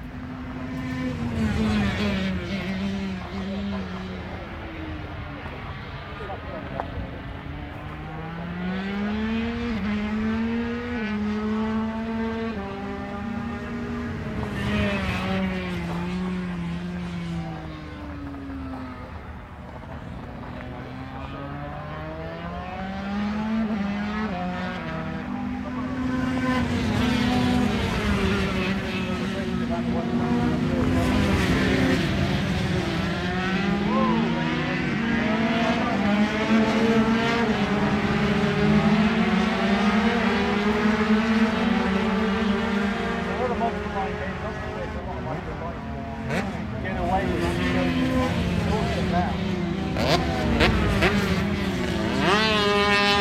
Donington Park Circuit, Derby, United Kingdom - British Motorcycle Grand Prix 2004 ... 125 ...
British Motorcycle Grand Prix 2004 ... 125 free practice ... one point stereo mic to mini-disk ...
24 July, 09:00